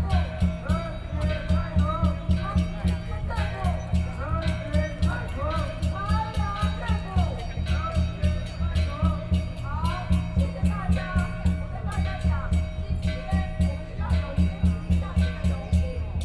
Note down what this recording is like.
Proposed by the masses are gathering in, Sony PCM D50 + Soundman OKM II